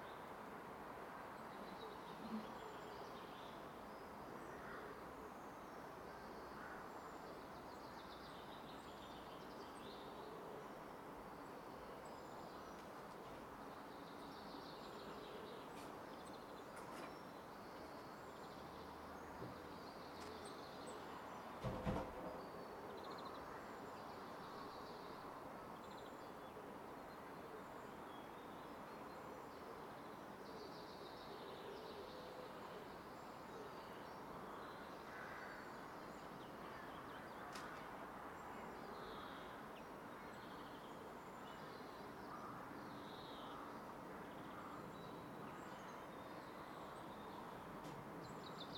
{
  "title": "Eckernförder Str., Kronshagen, Deutschland - Sunday morning",
  "date": "2019-03-10 10:00:00",
  "description": "Sunday morning in a rather quiet neighbourhood, distant church bells ringing, singing birds (mainly blackbirds and pigeons), a neighbour at his trash can, a distant train passing by, a plane crossing high above; Tascam DR-100 MK III built-in uni-directional stereo microphones with furry wind screen",
  "latitude": "54.35",
  "longitude": "10.10",
  "altitude": "16",
  "timezone": "Europe/Berlin"
}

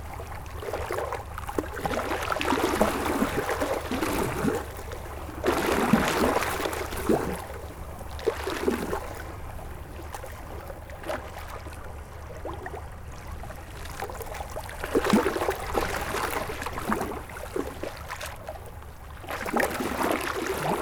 {
  "title": "Berville-sur-Mer, France - Seine river",
  "date": "2016-07-21 12:30:00",
  "description": "Sound of the waves in front of the Seine river, during the high tide.",
  "latitude": "49.44",
  "longitude": "0.35",
  "altitude": "4",
  "timezone": "Europe/Paris"
}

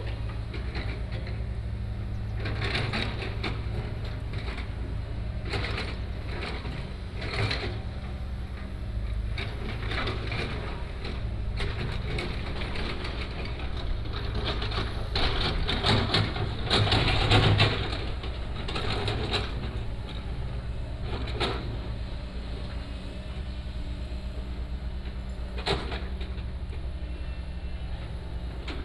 {
  "title": "Fuxing Rd., Nangan Township - Road Construction",
  "date": "2014-10-14 16:54:00",
  "description": "Road Construction, Dogs barking",
  "latitude": "26.16",
  "longitude": "119.95",
  "altitude": "39",
  "timezone": "Asia/Taipei"
}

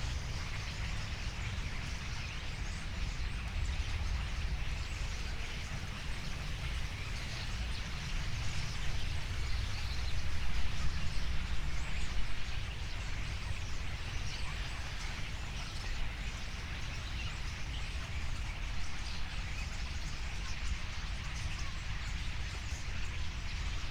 {
  "title": "Tempelhofer Feld, Berlin, Deutschland - starlings in poplar tree",
  "date": "2014-08-06 18:35:00",
  "description": "a bunch of starlings arrived at the poplars\n(SD702, 2xuNT1)",
  "latitude": "52.48",
  "longitude": "13.40",
  "altitude": "42",
  "timezone": "Europe/Berlin"
}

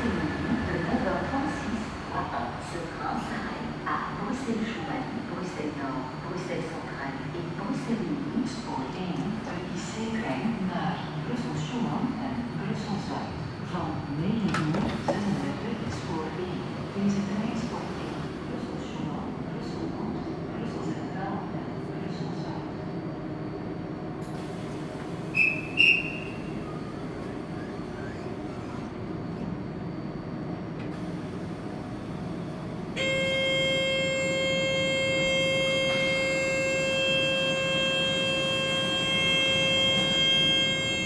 {
  "title": "Gare de Bruxelles-Luxembourg, Rue de Trèves, Brussels, Belgique - Platform ambience",
  "date": "2021-12-28 09:15:00",
  "description": "Voices, trains announcement, trains passing by.\nTech Note : Sony PCM-D100 internal microphones, wide position.",
  "latitude": "50.84",
  "longitude": "4.37",
  "altitude": "72",
  "timezone": "Europe/Brussels"
}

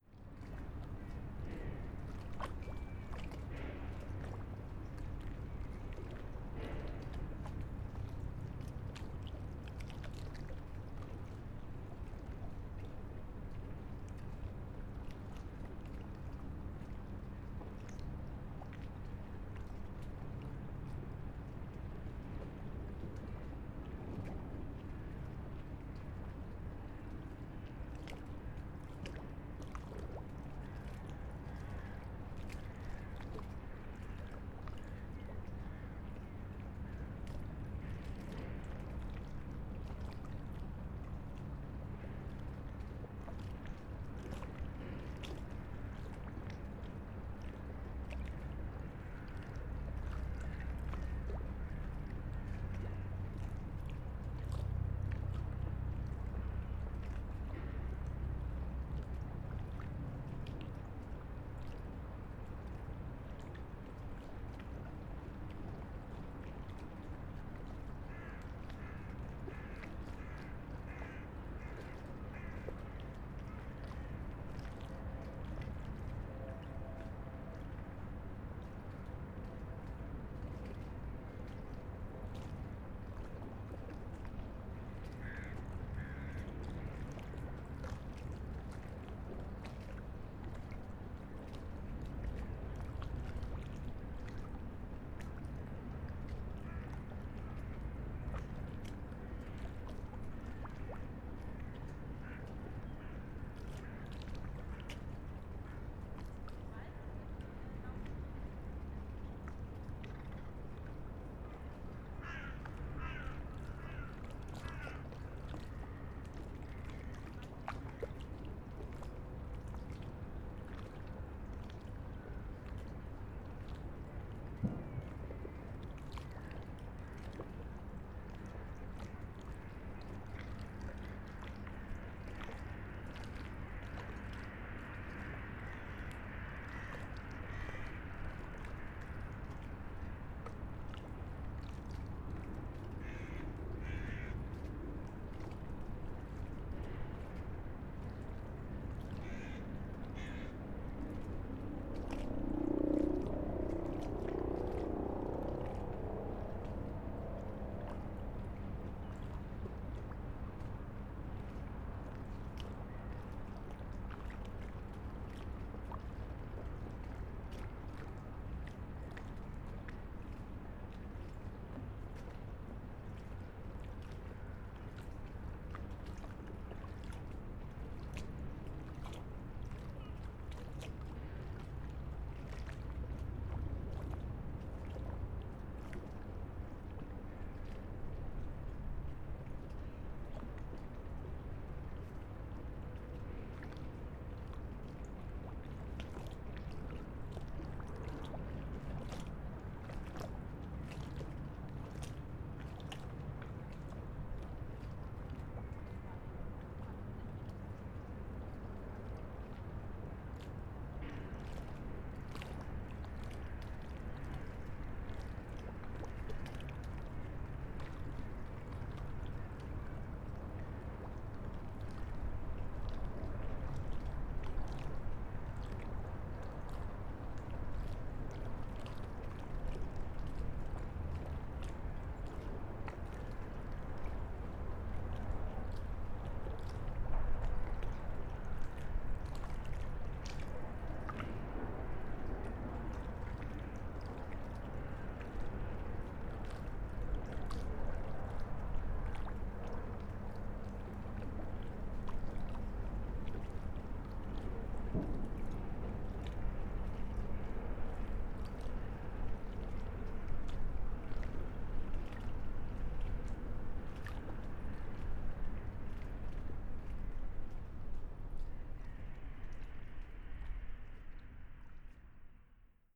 {
  "title": "Berlin, Plänterwald, Spree - early winter afternoon",
  "date": "2018-12-28 14:55:00",
  "description": "place revisited on a rather warm early winter afternoon\n(SD702, SL S502 ORTF)",
  "latitude": "52.49",
  "longitude": "13.49",
  "altitude": "23",
  "timezone": "Europe/Berlin"
}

